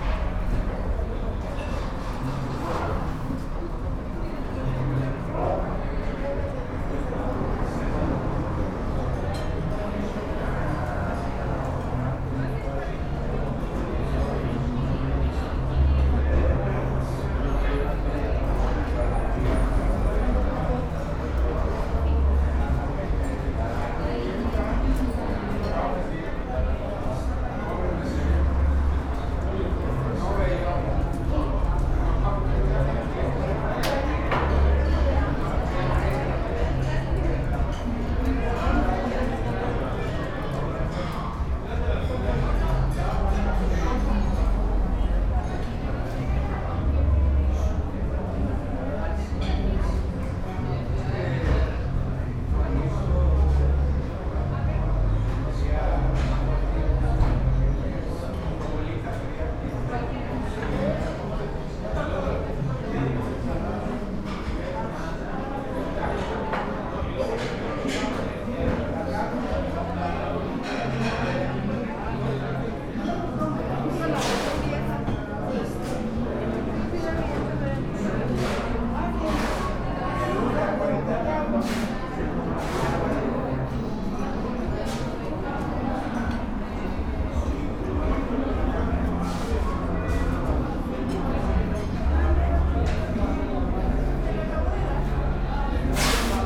Sushi Tai Japanese restaurant.
I made this recording on april 24th, 2022, at 3:51 p.m.
I used a Tascam DR-05X with its built-in microphones and a Tascam WS-11 windshield.
Original Recording:
Type: Stereo
Esta grabación la hice el 24 de abril de 2022 a las 15:51 horas.
Guanajuato, México, 24 April 2022